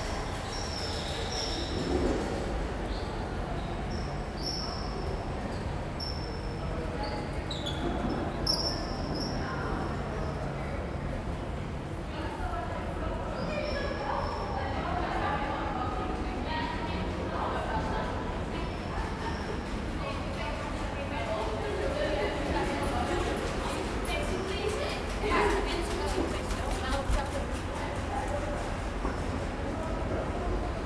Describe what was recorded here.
Fieldrecording by Laura Loaspio, zoom H4n, Documentaire one-take fieldrecording doorheen de voetgangerstunnel van Antwerpen: van rechteroever (de stad) naar linkeroever (buiten de stad) van Antwerpen. Opgenomen op een warme dag in April waardoor er heel veel fietsende toeristen richting de stad trokken. Interessant aan deze plaats zijn de oer oude houten roltrappen die nog net klinken zoals vroeger omdat ze niet worden beïnvloed door geluiden van buitenaf en anderzijds de specifieke akoestiek van deze tunnel.